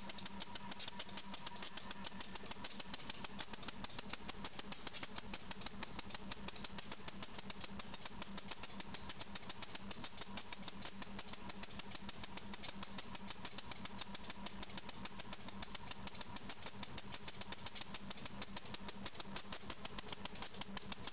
Buckingham 5 Train @ Charlottesville Station - Buckingham 5 Train @ BB Charlottesville Station